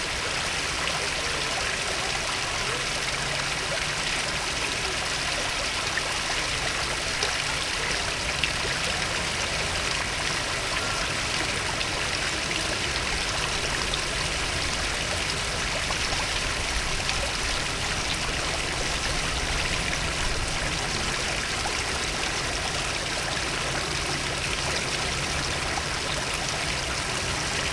Fontaine Hotel de ville Paris
circulation
son mécanique
Paris, France, 18 May